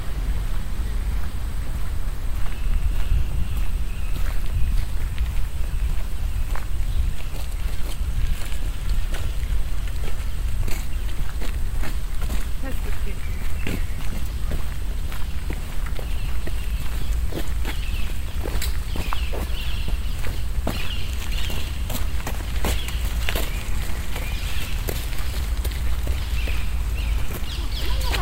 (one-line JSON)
{"title": "cologne, stadtgarten, soundmap, hinterer Kiesweg - cologne, stadtgarten, soundmap, hinterer kiesweg", "date": "2008-04-22 13:19:00", "description": "stereofeldaufnahmen im september 07 mittags\nproject: klang raum garten/ sound in public spaces - in & outdoor nearfield recordings", "latitude": "50.95", "longitude": "6.94", "altitude": "52", "timezone": "Europe/Berlin"}